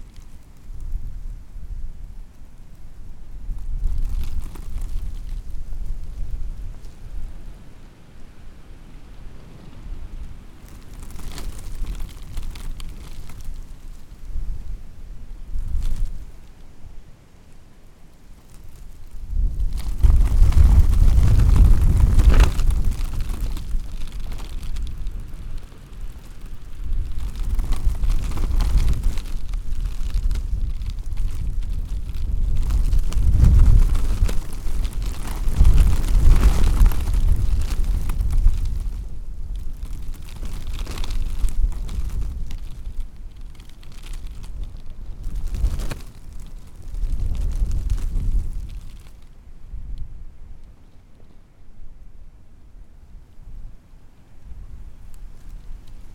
Recording of a security tape rustling on a wind.
recorded with Sony D100
sound posted by Katarzyna Trzeciak